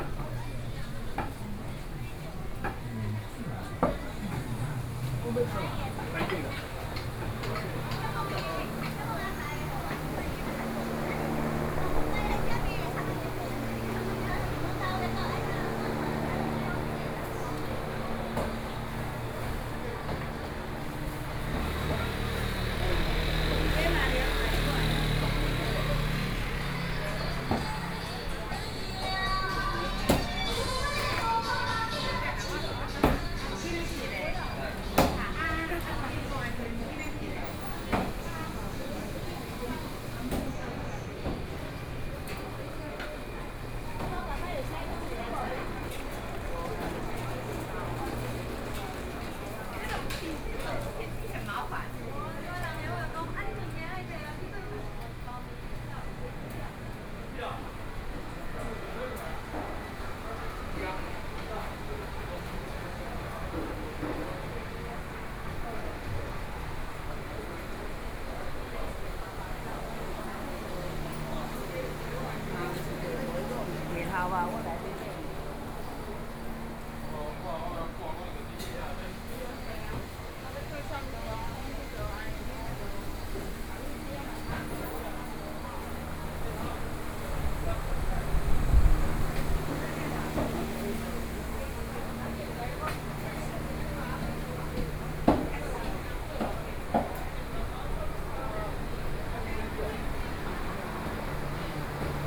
Gongzheng Rd., Luodong Township - Traditional Market
Walking through the market in the building, Binaural recordings, Zoom H4n+ Soundman OKM II